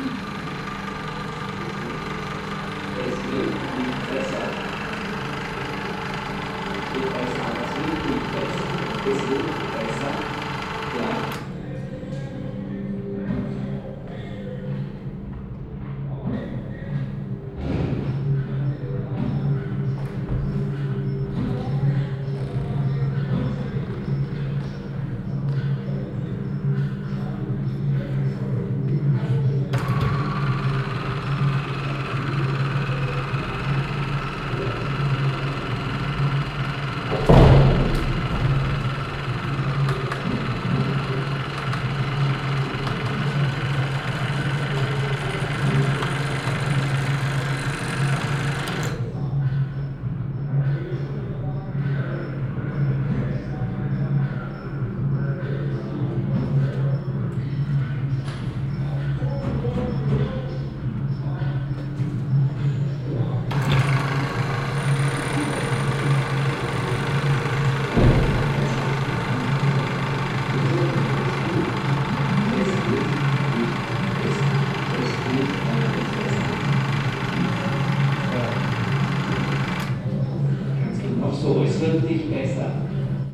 Altstadt-Nord, Köln, Deutschland - Cologne, Museum Ludwig, machines by Andreas Fischer
Inside the museum in the basement area - during an exhibition of sound machines by artist Andreas Fischer. Here a machine that starts to move as tehe visitor pulls a string. In the backgound of the dark room the word "Demut"
soundmap nrw - social ambiences, topographic field recordings and art places